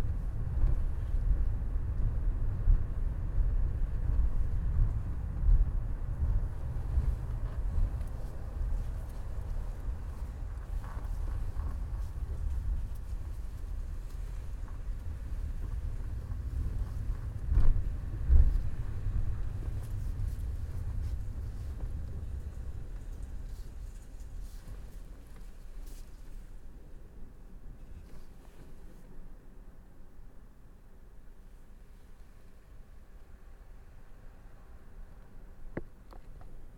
University of Colorado Boulder, Regent Parking Garage - Morning Traffic